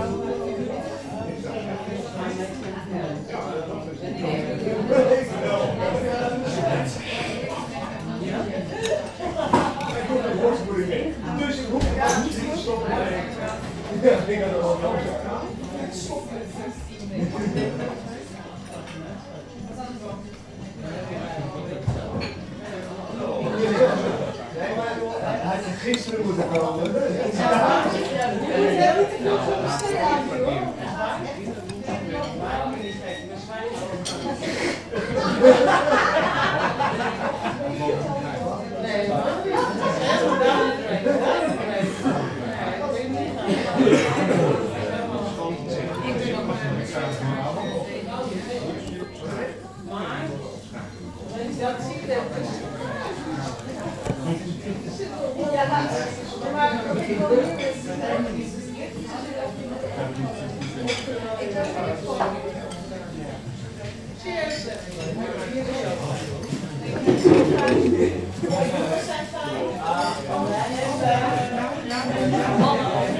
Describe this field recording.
Bar interior of Café de Oranjerie. Recorded with Zoom H2 internal mics.